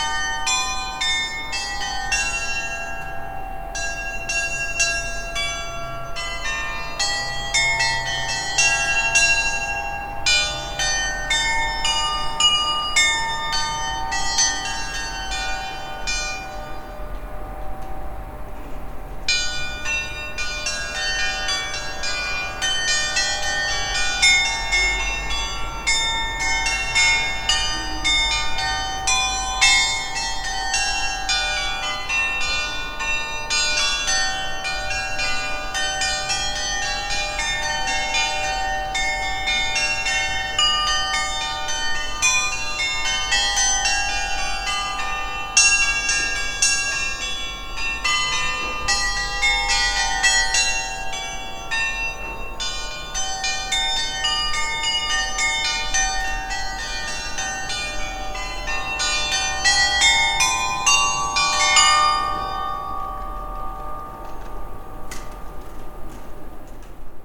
Evangelische Stadtkirche (Protestant City Church), Ambrosius-Vaßbender-Platz, Remscheid, Deutschland (Germany) - Glockenspiel - Carillon
Das Glockenspiel der Evangelischen Stadtkirche spielt mehrmals täglich zur vollen Stunde Lieder. Das Repertoire reicht von spirituellen Liedern bis hin zu Volksmusik.
The carillon of the Evangelische Stadtkirche (Protestant City Church) plays songs several times a day on the hour. The repertoire ranges from spiritual songs to folk music.
GW